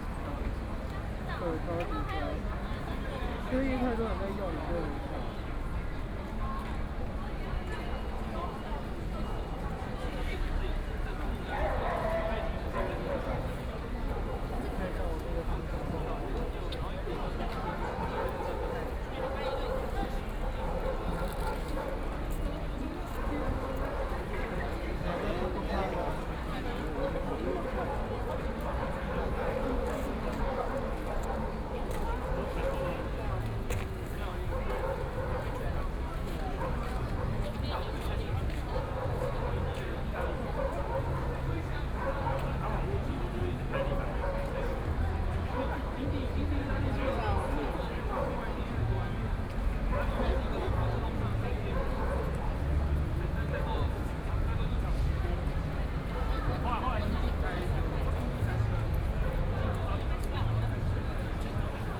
中正區幸福里, Taipei City - In the alley
Participation in protest crowd, Student sit-ins in the alley
Binaural recordings, Sony PCM D100 + Soundman OKM II